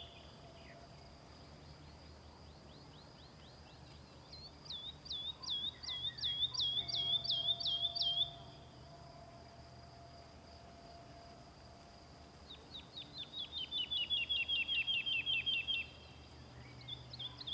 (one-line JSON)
{"title": "Fürstenberger Wald- und Seengebiet, Germany - Lovely song of the Woodlark", "date": "2015-05-15 18:37:00", "description": "with a light breeze hissing the leaves in the birch and beach trees and occasional golden orioles, crows and blackbird in the background.", "latitude": "53.06", "longitude": "13.38", "altitude": "55", "timezone": "Europe/Berlin"}